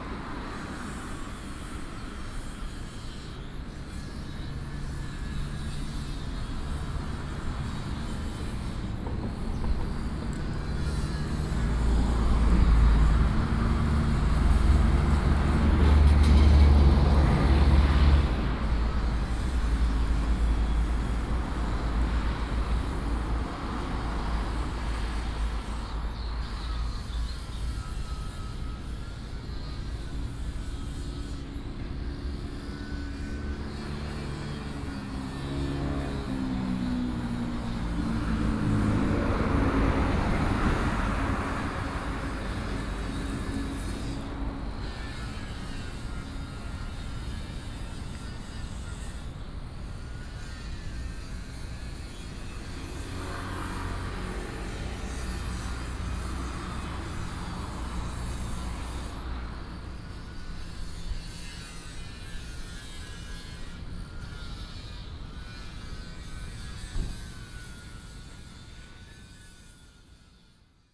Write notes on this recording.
in the parking lot, Very hot weather, Traffic Sound